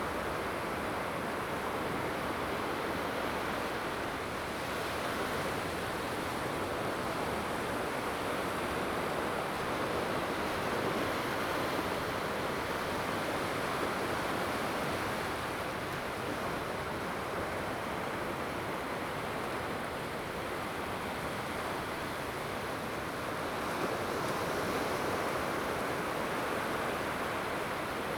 Qianzhouzi, Tamsui Dist., New Taipei City - Sound of the waves
Sound of the waves
Zoom H2n MS+XY
New Taipei City, Tamsui District, April 2016